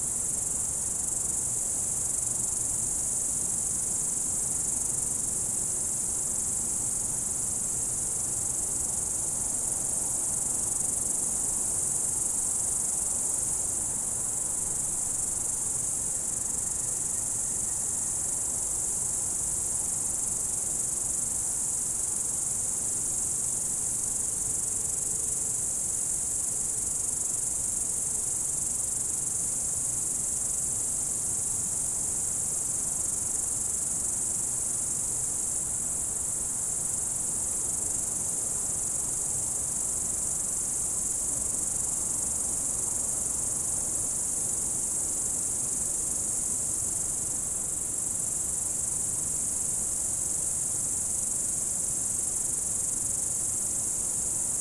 Province of Vladimir - Evening forest.
Cicadas, Dog barking